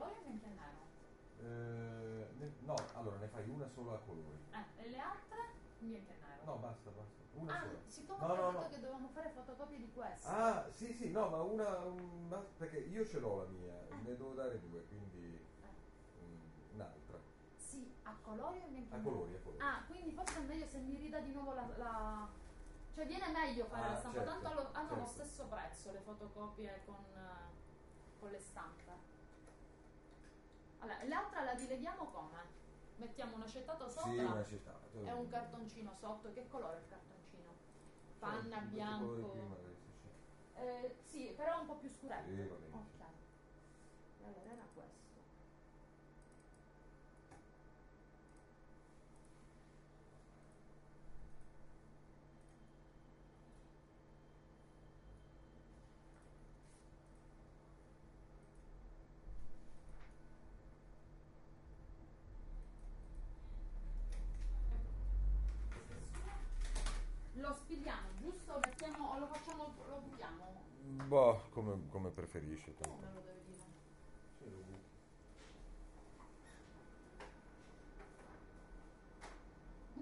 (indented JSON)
{
  "title": "In copisteria, h 10,30 25/01/2010",
  "description": "Copisteria, (romanlux) (edirol r-09hr)",
  "latitude": "38.11",
  "longitude": "13.36",
  "altitude": "27",
  "timezone": "Europe/Berlin"
}